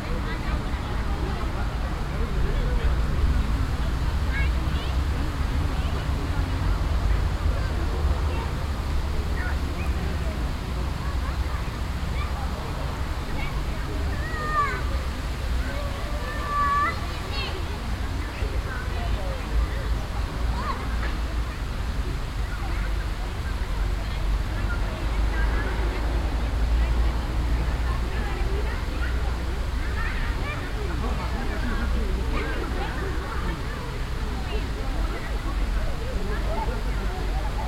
Hiroshima Park, Kiel, Deutschland - Sunday in a park in Kiel city (binaural recording)
People with children enjoying a sunny Sunday in a park in the city. Noise of a water game, some traffic, 2:30 PM chimes of the town hall clock. Sony PCM-A10 recorder with Soundman OKM II Klassik microphone and furry windjammer.
Schleswig-Holstein, Deutschland, 30 May 2021